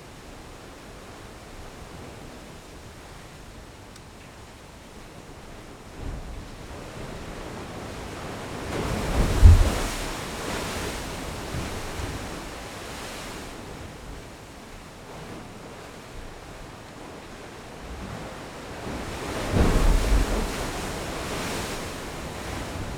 Las Puntas, Santa Cruz de Tenerife, España - Bufadero de Las Puntas
This recording was made in a place called Las Puntas, just in the entrance of the smallest hostel in the world. There we can find a “bufadero”. Is a hole in the volcanic ground throw which the wind, pushed by the waves of the ocean, blows.
Santa Cruz de Tenerife, Spain